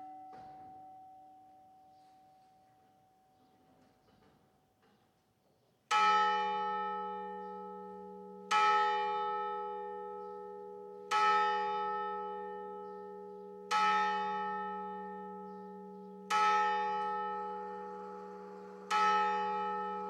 Bolulla - Province d'Alicante - Espagne
4 coups cloche 1 (les heures) + 19h (7 coups - 2 fois - cloche 2) + ce qui semble être un Angélus (cloche 2).
ZOOM F3 + AKG 451B